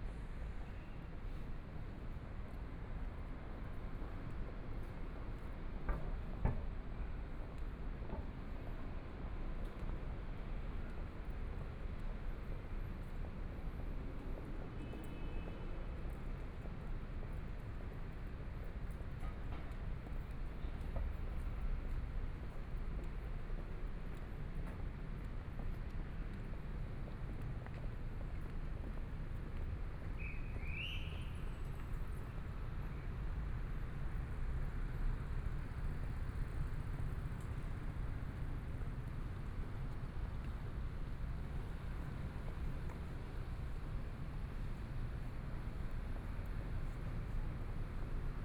Sec., Minsheng E. Rd., Zhongshan Dist. - Walking on the road
Environmental sounds, Walking on the road, Motorcycle sound, Traffic Sound, Binaural recordings, Zoom H4n+ Soundman OKM II
Taipei City, Taiwan